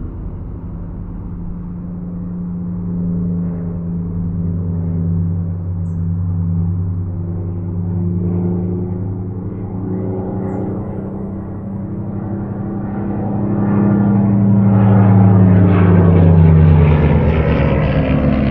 Spitfire, Malvern Wells, UK
A rare opportunity to record a WW2 Spitfire above my house performing an aerobatic display. Maybe you can detect the slow victory roll at the end.
MixPre 6 II with 2 Sennheiser MKH 8020s on the roof to capture the best sounds reverberating off The Malvern Hills and across The Severn Valley.